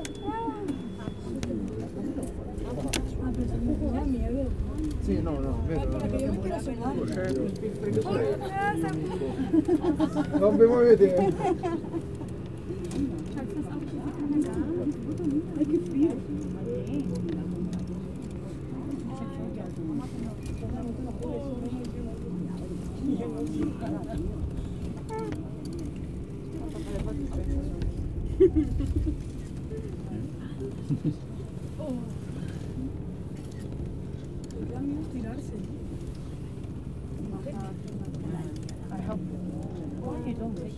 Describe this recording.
The first half of the cable car journey, from Sant Sebastia tower to Jaume I tower. You can hear the voices of other passengers, cameras, and the creaking of the cabin. Unfortunately I ran out of memory on my recorder so wasn't able to record the rest of the journey. Recorded with Zoom H4n